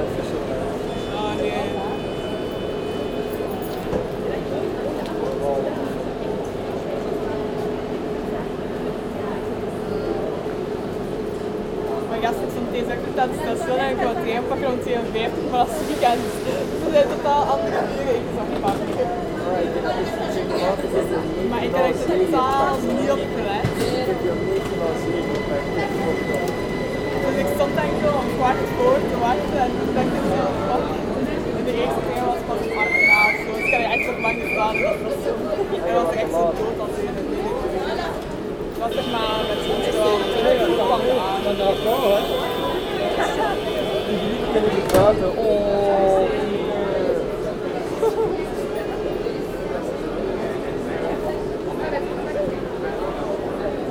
Brussel, Belgium - Brussels Centraal Station
The Brussels Central station, a big underground train station. Walking into the main hall with huge reverb and after, listening to a train leaving the platform 6. This is the busiest station of the world. Only with 6 tracks, a train every 20 seconds in business day and rush hour.